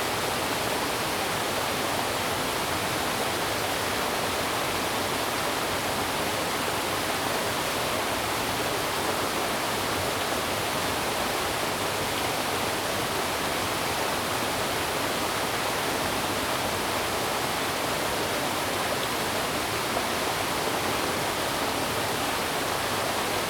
Zhonggua Rd., Puli Township, Nantou County - Stream sound
Stream sound
Zoom H2n MS+ XY
26 April 2016, ~12:00